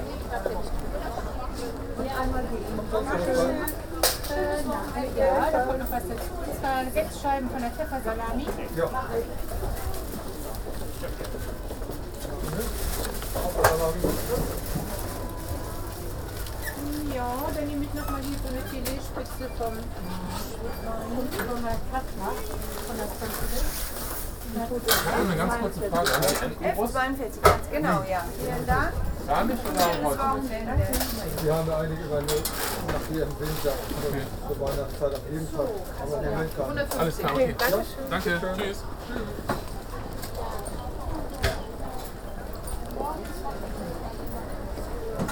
Aufschnitt und haben Sie Entenbrust? Markt Große Bergstraße. 31.10.2009 - Große Bergstraße/Möbelhaus Moorfleet
Imbiss zum Backhus/Markt Große Bergstraße